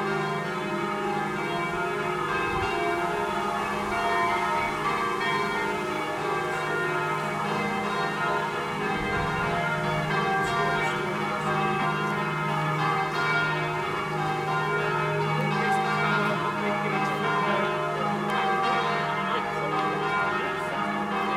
Carlisle Cathedral, Abbey St, Carlisle, UK - Bells of Carlisle Cathedral
Bells of Carlisle Cathedral, wind in nearby trees, some slight wind distortion on the microphone, people passing and chatting. Recorded with members of Prism Arts.
Cumbria, England, United Kingdom